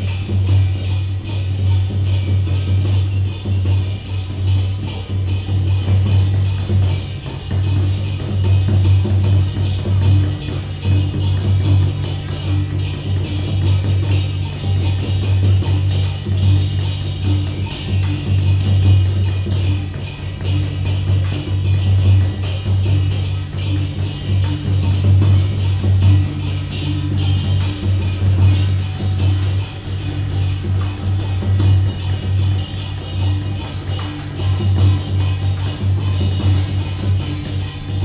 大仁街, Tamsui District, New Taipei City - temple fair
Traditional temple festivals, Firecrackers sound, temple fair